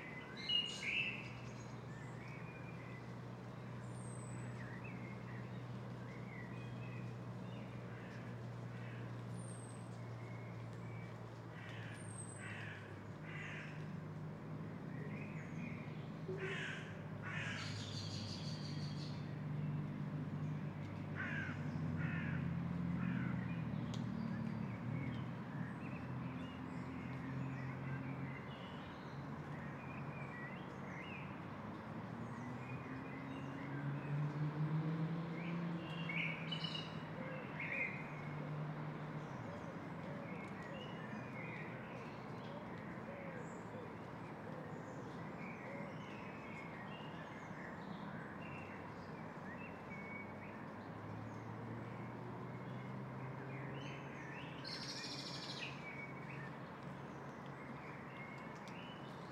Risskov, Danmark - Birds singing in Mollerup Forrest
Birds singing in the forrest. Cars can be heard in the distance. It has just stopped raining, but it is still dripping a bit.
Recorded standing still using a parabolic microphone.
Risskov, Denmark, 19 June, ~14:00